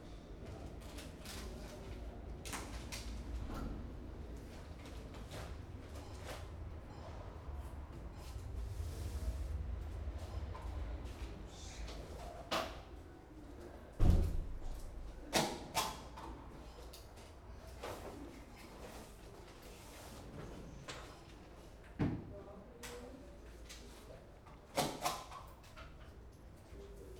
Poznan, Lazarz district, main post office - waiting room of a customs office
a few business customers clearing customs and picking up their parcels. clerk stamping documents. very intriguing sounds of scanning/sorting machinery and conversations coming from a room with no access behind a wall.